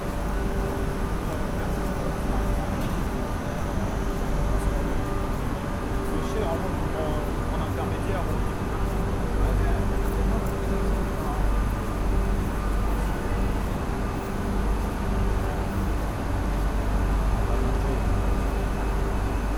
Gare Saint-Lazare, Paris, France - Saint-Lazare station
Taking the train to Rouen in the Paris Saint-Lazare station.